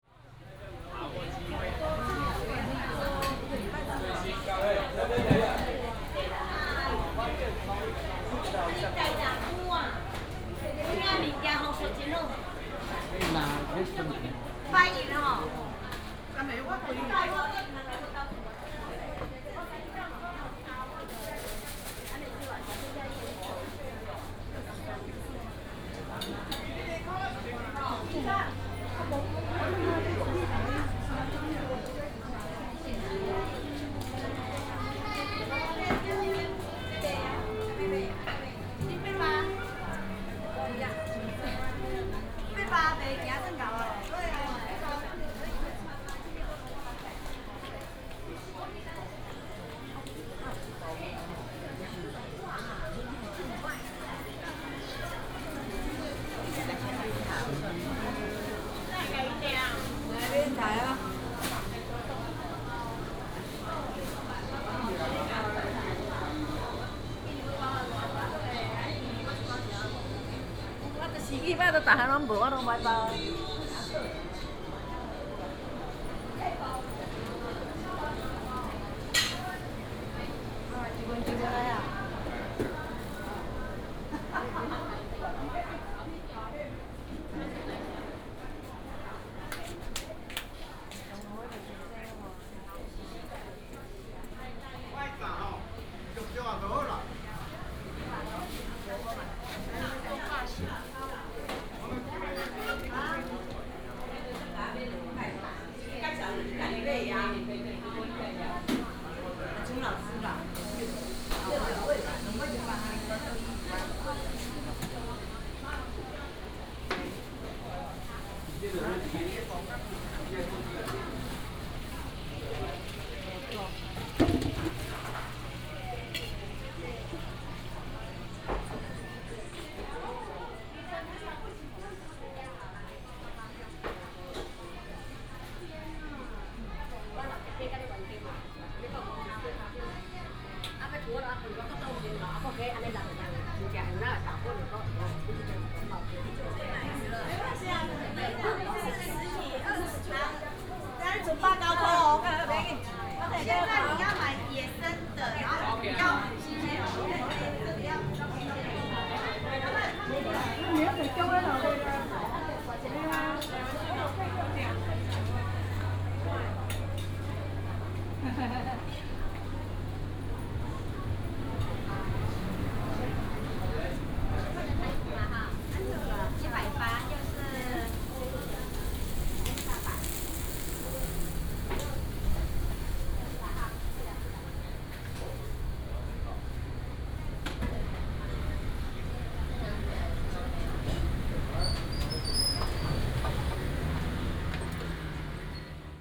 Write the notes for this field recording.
Walking through the market, Traffic sound